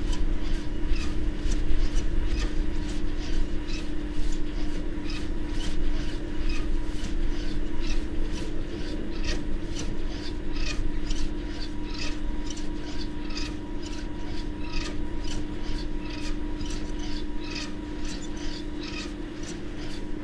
Luttons, UK - wind turbine ...
wind turbine ... lavalier mics in a parabolic ...
Malton, UK, 9 January